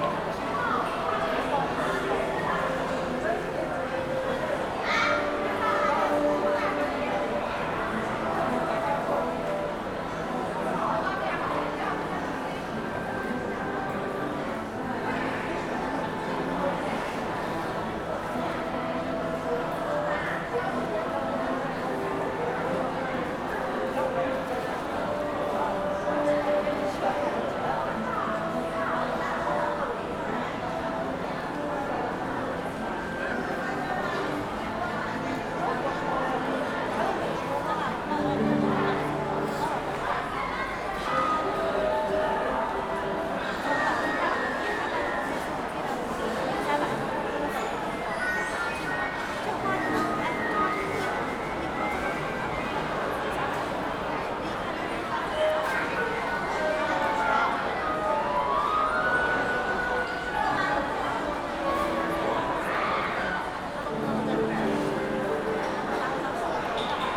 general ambient of Shangai train station